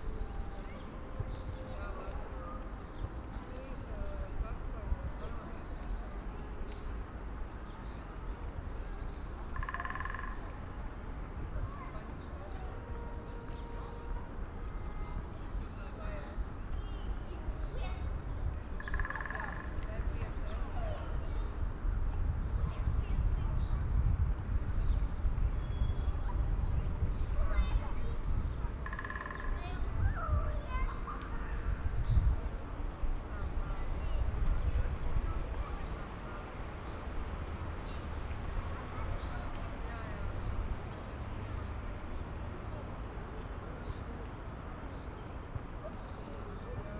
Maribor, Mestni park - afternoon ambience
place revisited, warm spring afternoon, a bit of wind...
(Sony PCM D50, Primo EM172)
Maribor, Slovenia